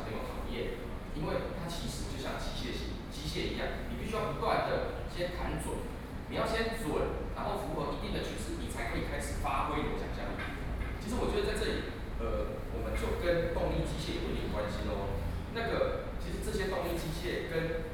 Nou Gallery - Artists forum
Art critic is to express their views, Sony Pcm d50+ Soundman OKM II
21 July, 16:15